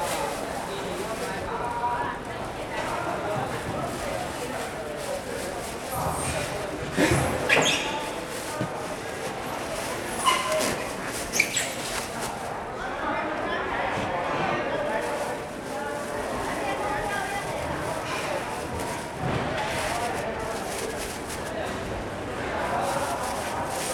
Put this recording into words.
Fruits and vegetables wholesale market, Traffic Sound, Sony Hi-MD MZ-RH1 +Sony ECM-MS907